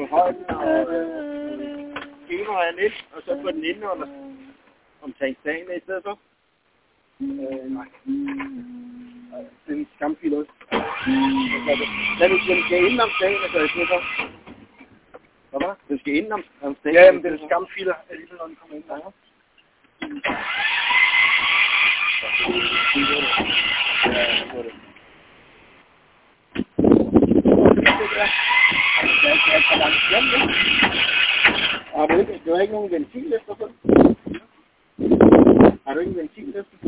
Im Segelhafen, Kopenhagen, wir legen ab